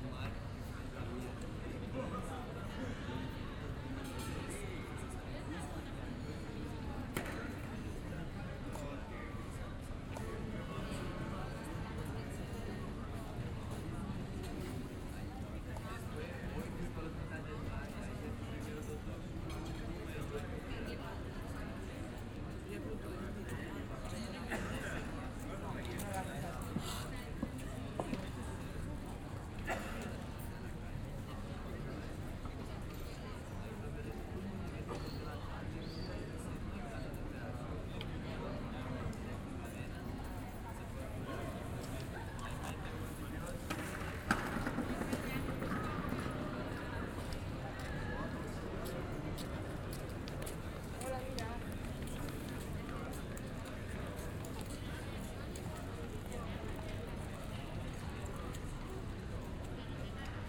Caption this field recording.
Recorded at Plaza George Orwell in Barcelona, a square famous for its surveillance cameras. It seems a joke, but its real.